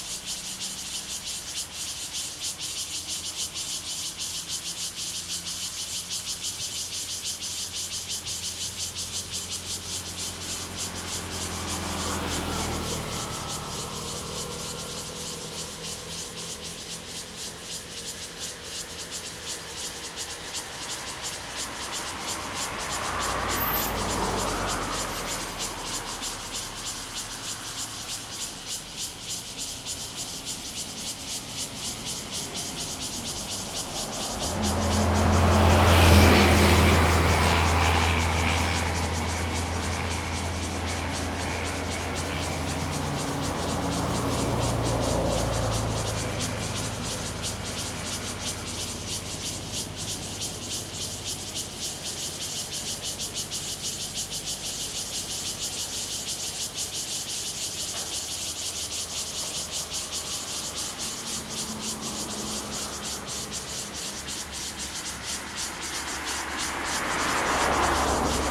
Jianxing Rd., Jhiben - Cicadas sound
Cicadas sound, Traffic Sound, Very hot weather
Zoom H2n MS + XY